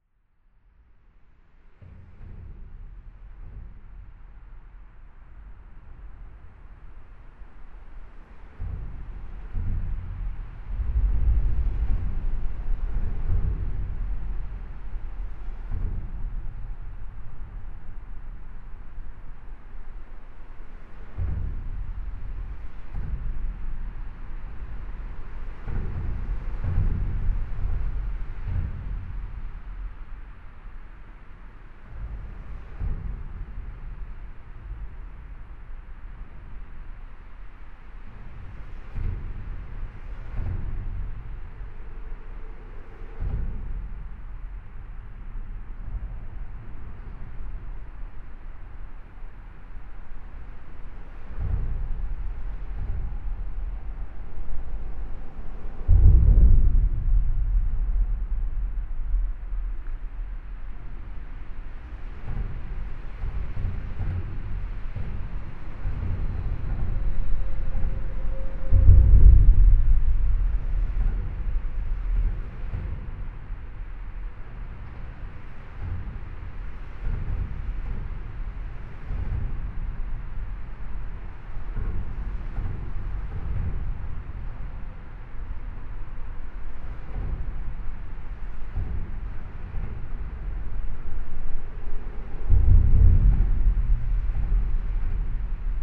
Bruxelles, Belgium - Vilvoorde viaduct
Below the Vilvoorde viaduct. Sound of the traffic. I'm dreaming to go inside and one day it will be true !